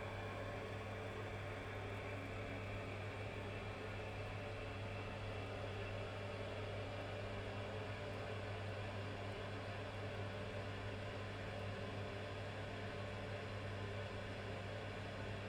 Berlin Bürknerstr., backyard window - the gas heating in my kitchen
cold early winter day. the gas heating in my kitchen produces an interesting range of sounds during operation. you hear 3 modes: on, idle, off. mic close to the device. very distant outside sounds in the end, maybe through the chimney.
Berlin, Germany